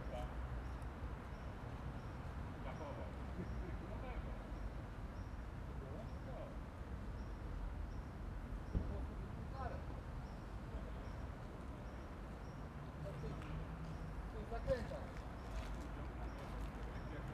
Behind the Haus der Statistik, Berolinastraße, Berlin, Germany - Behind the Haus der Statistik
Surrounded by high derelict building, with crumbling white concrete this square bizarrely has a covered raised area in its midst. Unclear what for. A large drinks lorry finishes its delivery and drives off. A couple embrace, kissing passionately at length, under trees along the edge. The building site workers are stopping for the day, dragging barriers across the entrances and locking them. They pass bu chatting towards their cars.